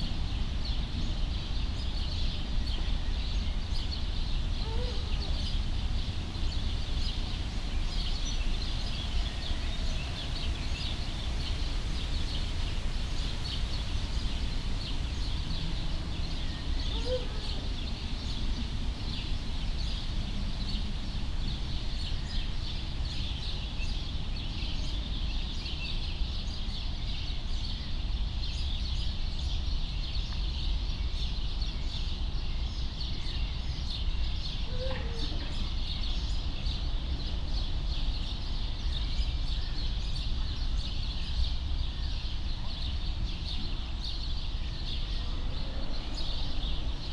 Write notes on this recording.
not so near to the cage with several owls, but close enough to hear sad voices of caged birds